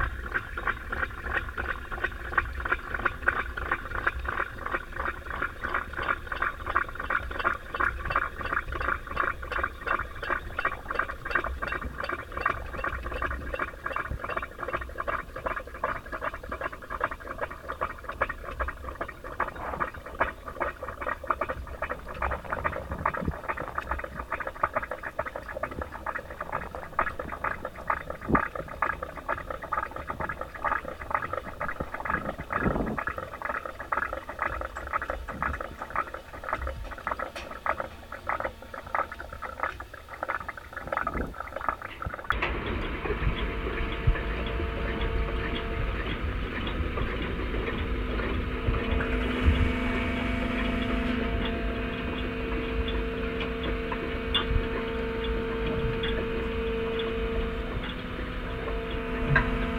two hydrophonic microphones (stereo) lowered into water over a wall.
United Kingdom, European Union, 5 March 2013, 12:45